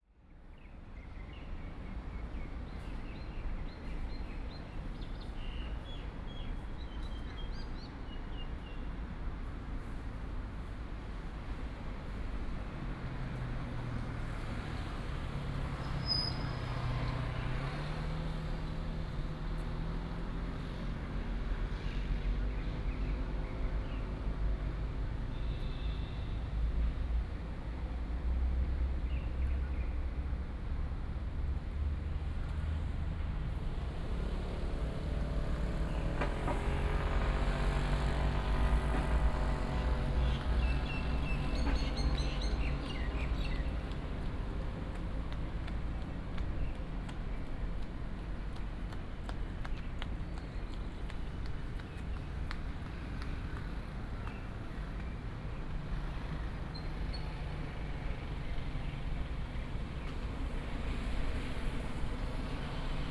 竹北水圳森林公園, Zhubei City - Traffic and birds sound
in the Park entrance, sound of the birds, Running sound, Traffic sound
Hsinchu County, Zhubei City, 復興三路二段82號, 7 May